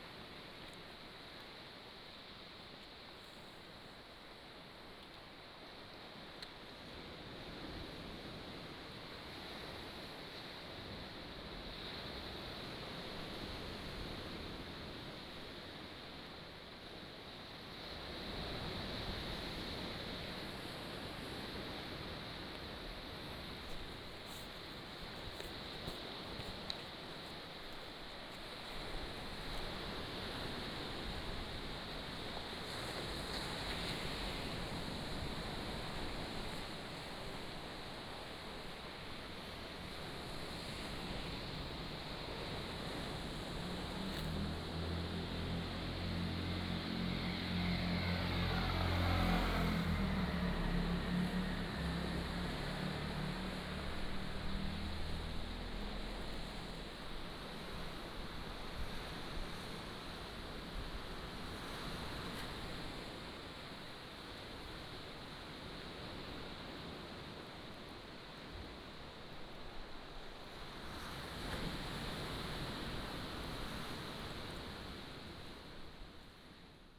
龜灣鼻, Lüdao Township - sound of the waves
On the coast, sound of the waves